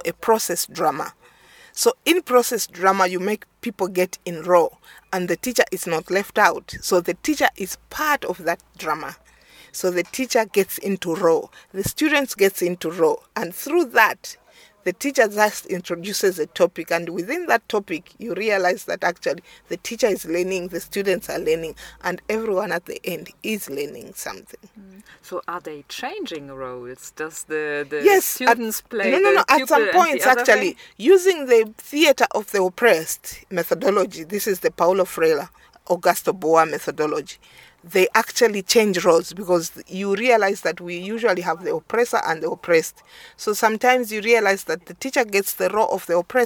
To record this interview with Mary, we were hiding in Mary’s car from the sun and the general busyness outside. Rehearsals with 260 young musicians and performers inside Old Independence Stadium for the Zone 6 Youth Sports Games are still ongoing, while Mary describes to me, and our future listeners, how theatre can respond to the needs of a community and how dramatic re-enactment can inspire change, for example in schools…
Mary Manzole is an actress, theatre director, educator, founder member of Zambia Popular Theatre Alliance (ZAPOTA), and artistic director at Kamoto Community Arts.
The full interview with Mary is archived here:
Lusaka, Zambia, 26 November 2012, 12:15